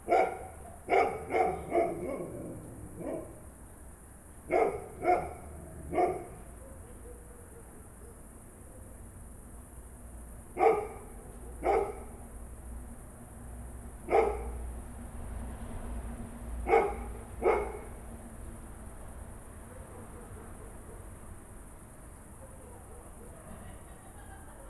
summer night ambience on the balcony of babica house
(SD702, Audio Technica BP4025)
Maribor, Slovenia, 31 July 2012